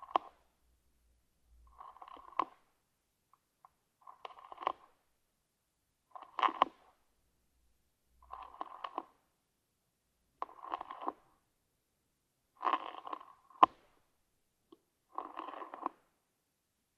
In the all-animals-eating collection, this recording is about a slug eating a spinach leave. I was wishing to do this on the same time of the snail recording, but this brat didn’t want to eat anything !! So, I put it in a pot during 24 hours, in a dry place, and I famished it. After this time of latency, strategy was to put it on a wet young spinach leave, as I know slug adore this kind of vegetables. I put two contact microphones below the leave, fixed on toothpicks. Slug immediately eat this banquet, making big holes in spinach.
The sound of a slug eating is clearly more flabby than a snail, but it remains quite interesting.
Mont-Saint-Guibert, Belgium, 1 June 2016, 18:20